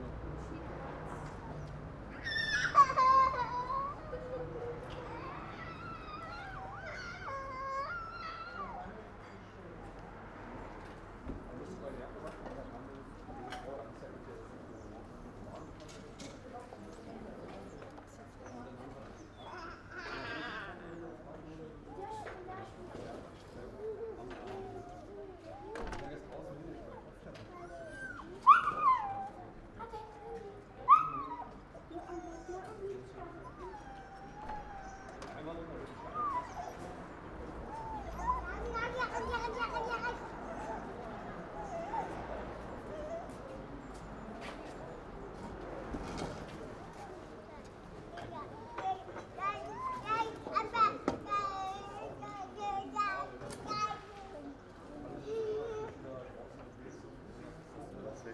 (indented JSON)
{
  "title": "Walter-Friedrich-Straße, Berlin Buch - outside cafe ambience",
  "date": "2019-02-17 14:40:00",
  "description": "in front of a bakery cafe\n(Sony PCM D50)",
  "latitude": "52.63",
  "longitude": "13.50",
  "altitude": "57",
  "timezone": "GMT+1"
}